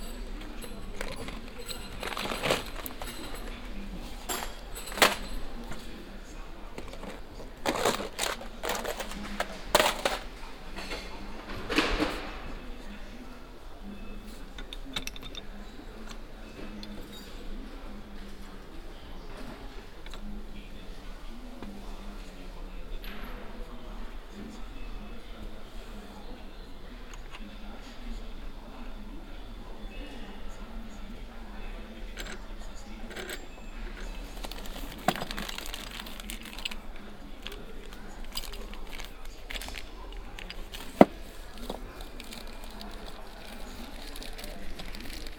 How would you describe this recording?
inside a new big store for handcraft tools and construction material, soundmap d - social ambiences and topographic field recordings